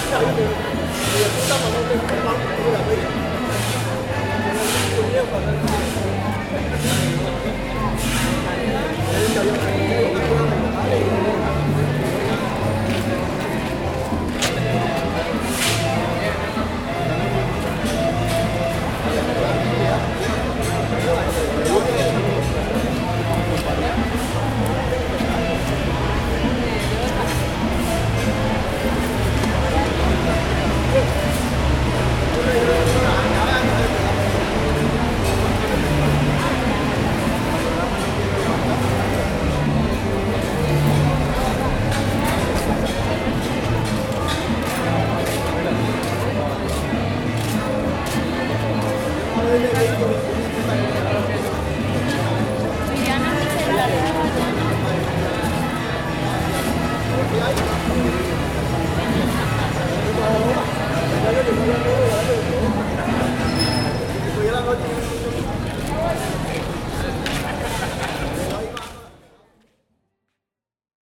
Villavicencio, Meta, Colombia - Mercados Campesinos 7 Marzo 2015
Ambientes Sonoros en los Mercados Campesinos que tienen lugar cada quince días en el polideportivo del barrio La Esperanza séptima etapa.
March 2015